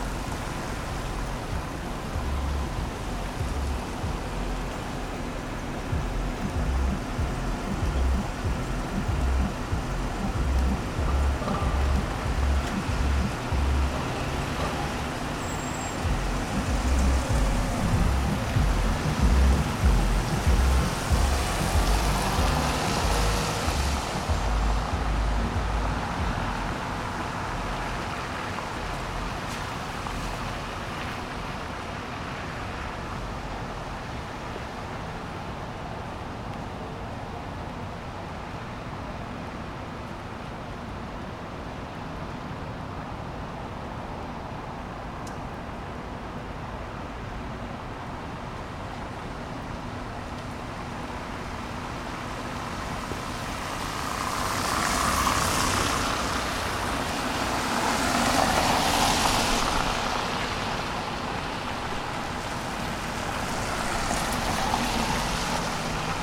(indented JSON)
{
  "title": "Mile Square, Indianapolis, IN, USA - Cars on the Circle",
  "date": "2015-01-03 14:53:00",
  "description": "Cars driving across the wet bricked road surface of the Circle at the center of downtown Indianapolis.",
  "latitude": "39.77",
  "longitude": "-86.16",
  "altitude": "248",
  "timezone": "America/Indiana/Indianapolis"
}